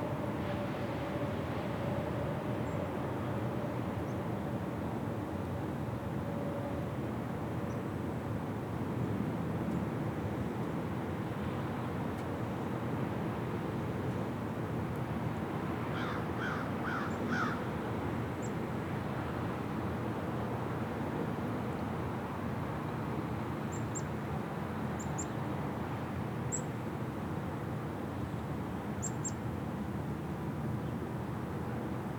Energy Park - Energy Park 2022-03-16 1536CDT
Recording next to railroad tracks in the Energy Park area of St Paul. Unfortunately no trains passed when making this recording.
Recorded using Zoom H5